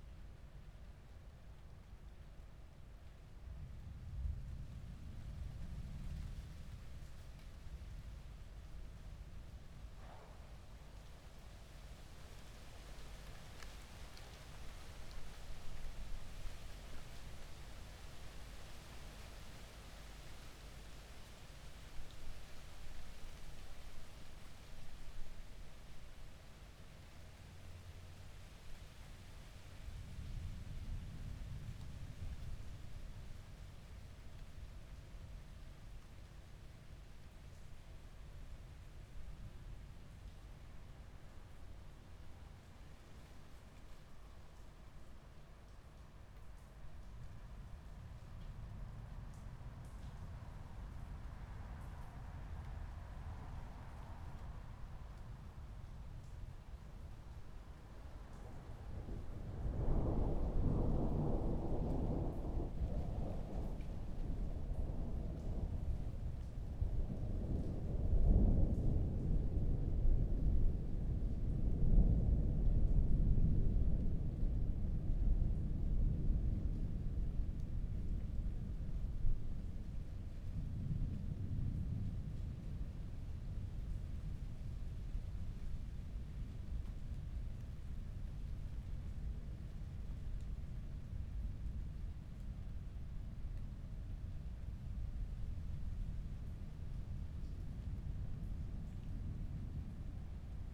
Loughborough Junction, London, UK - summer storm london 2.30 AM
lying listening to a summer storm at half past 2 in the morning on World Listening Day 2014
Roland R-09HR, electret stereo omnis out an upstairs window onto back gardens in S London